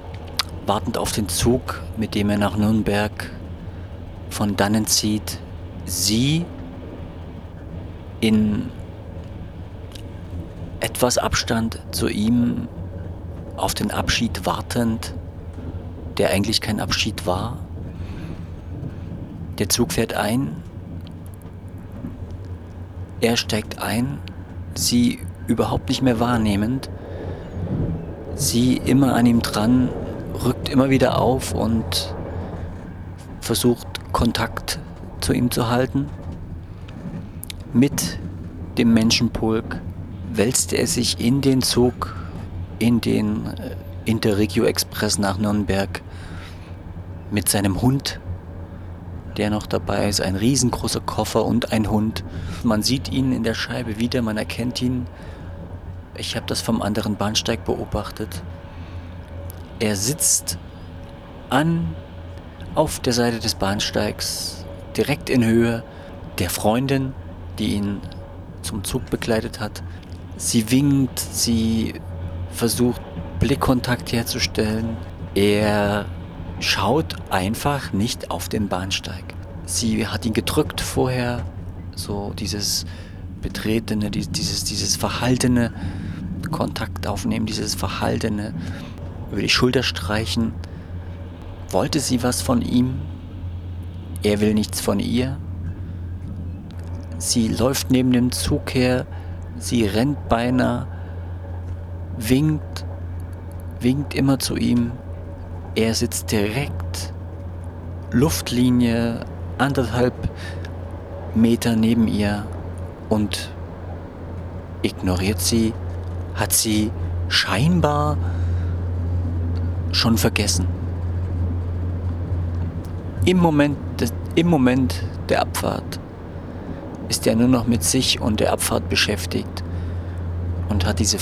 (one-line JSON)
{"title": "Pirna ZOB / Bahnhof, Pirna, Deutschland - Bahnimpressionen", "date": "2012-11-17 19:57:00", "description": "i started my own little 2nd Law World Tour from MUSE. chapter one VIENNA 19NOV 2012: On the train from berlin to vienna. at the end of germany...(pcm recorder olympus ls5)", "latitude": "50.96", "longitude": "13.93", "altitude": "118", "timezone": "Europe/Berlin"}